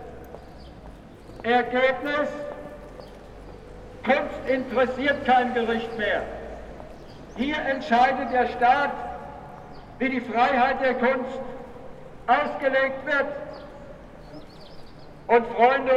Demosntration, Paulskirche, Frankfurt am Main, Deutschland - First of May Meeting 2020 at Paulskirche
The recording starts with the statement that not the corona virus is the pandemic but capitalism. On the square were something like 200 people. At 1:10 someone with a megaphone is anouncing what the discussion with the police brought. They cannot demonstrate. They are only allowed to go with fifty, she is saying that she will not count the people. After 4 minutes she says that they can just do their speeches and then go, that would be faster. People are chatting. Some crazy old fashioned socialists at 5:40 shout slogans Who saves the world. The worker and socialism. They sound like robots: Revolution, that the world is owned by the workers. At 8:50 the police is making an anouncement that the people should not be closer than one and a half meter. Someone (without mask) is singing an old german folk song (the thoughts are free). At 10:35 he shouts 'freedom for julian assange', someone comments: who is this?